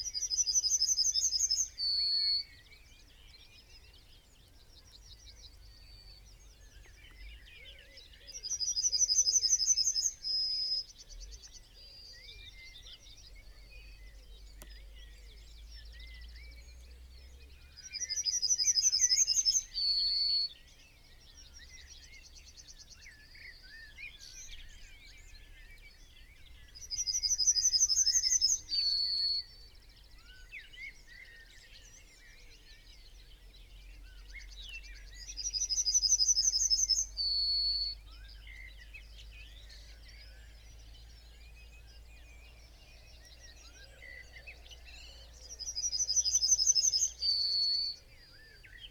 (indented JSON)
{
  "title": "Green Ln, Malton, UK - yellowhammer song and call ...",
  "date": "2019-06-24 04:43:00",
  "description": "yellowhammer song and call ... open lavalier mics clipped to bush ... bird song ... call ... from ... chaffinch ... dunnock ... wren ... pheasant ... blackbird ... song thrush ... crow ... whitethroat ... background noise ...",
  "latitude": "54.12",
  "longitude": "-0.54",
  "altitude": "83",
  "timezone": "Europe/London"
}